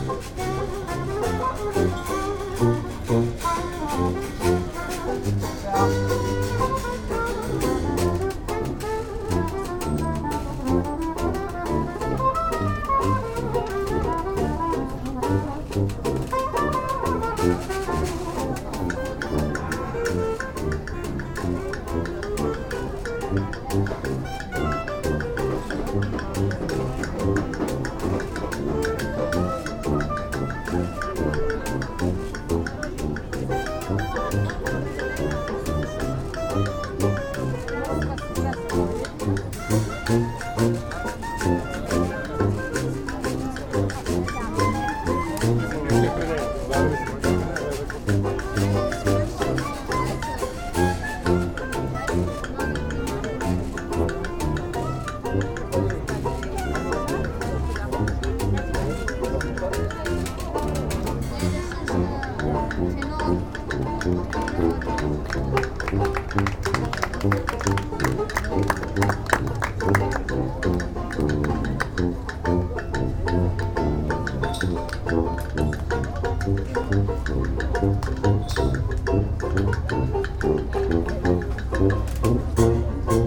Juan-les-Pins, Antibes, France - Old time band
On the boulevard where we were drinking Mojitos in the warm evening sunshine, a band of musicians turned up consisting of jazz saxophonist; clarinetist; tuba-player; banjo player and washboard aficionado. What a wonderful sound! I wandered over to check out their music, and you can hear the outdoor setting; many folks gathered around to hear the joyful music, a little bit of traffic, and the chatter of an informal gathering. After listening to this I went home and started searching on ebay for old washboards and thimbles...